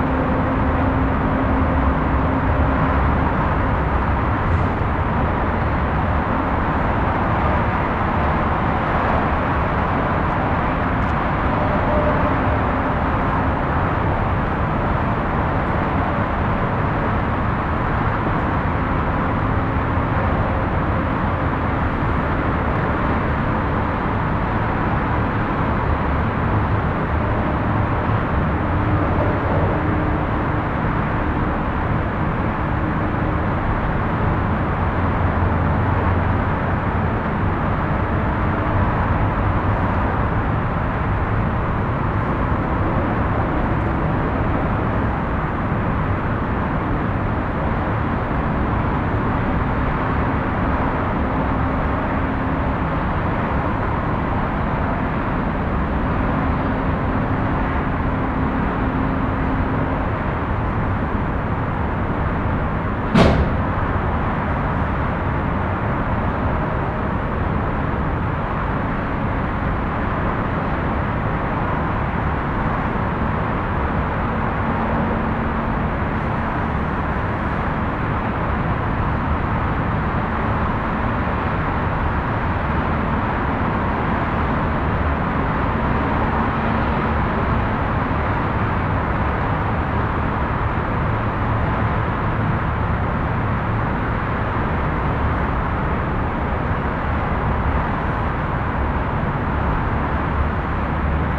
Rheinpark Bilk, Düsseldorf, Deutschland - Düsseldorf, Rheinturm, open platform
At the open, outdoor platform of the tower. A more long recording of the dronelike sound of the city traffic. Also some doors banging at the platform entrance.
This recording is part of the exhibition project - sonic states
soundmap nrw - topographic field recordings, social ambiences and art places
Düsseldorf, Germany, 22 November